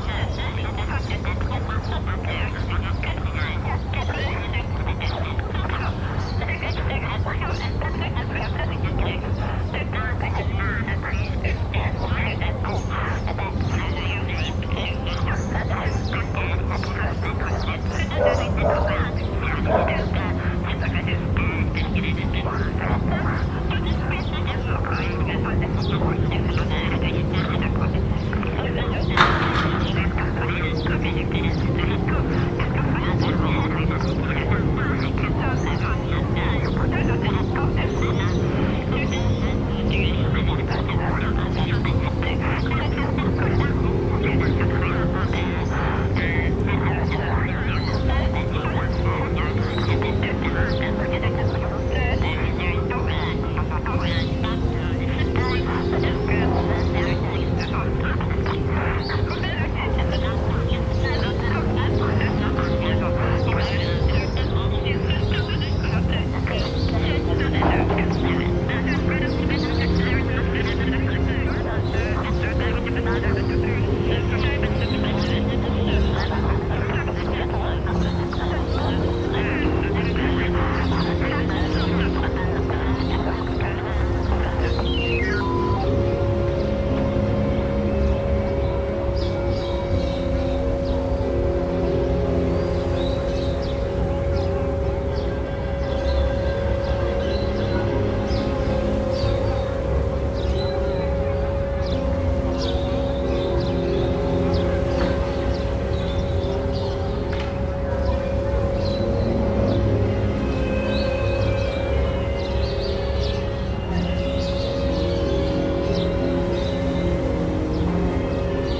Teslaradio, World Listening Day, Málaga, Andalucia, España - Locus Sonus #WLD2013
Locus Sonus WLD2013 es un pieza de 42 minutos que se realizó en directo los pasados dias 17 y 18 de Julio de 2013 con motivo de la semana de la escucha, transmisiones enmarcadas dentro del World Listening Day.
Utilicé los recursos sonoros del nodo Locus Sonus Malaga Invisible, el cual coordino y recursos de otros nodos de este proyecto asi como de Radio Aporee, mezclándolos en tiempo real con otras fuentes libres que se producian en esos instantes en la red.
+ info en: